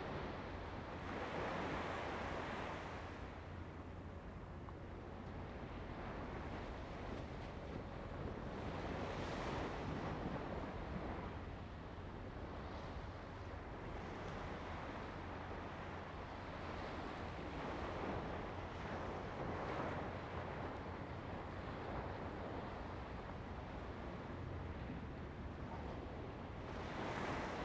料羅海濱公園, Jinhu Township - At the beach
At the beach, Sound of the waves
Zoom H2n MS+XY
福建省, Mainland - Taiwan Border, 3 November 2014